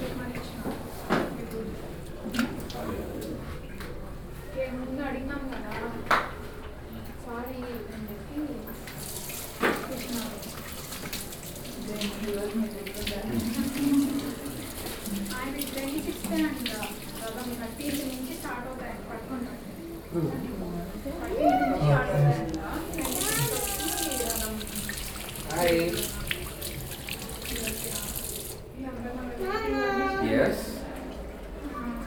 We enter the temple through a small room where visitors are asked to please leave their shoes and all leather ware since it means insult to the goddess. Visitors may also wash their hands here. Inside the temple believers walk from shrine to shrine and in prayers many times around one shrine. Some families sit one the ground waiting patiently with their offerings of fruits and flowers for a priest to attend to them. The temple observes a lunch break; so we leave the temple with all other visitors and the doors are locked behind us.
“When it was completed and inaugurated on 7 July 2002, the Sri Kamadchi Ampal Temple in the city of Hamm (Westphalia) was the largest Dravida temple in Europe and the second largest Hindu temple in Europe after the Neasden Temple in London, which was built in the North Indian Nagara style. It is the only temple of the goddess Kamakshi outside India or South Asia.”
Nordrhein-Westfalen, Deutschland, 4 June 2022, 13:40